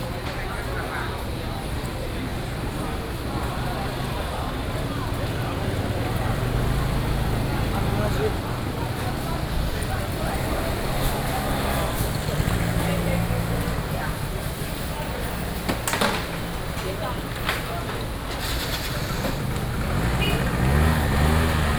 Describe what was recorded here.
Walking through the traditional market, Sony PCM D50+ Soundman OKM II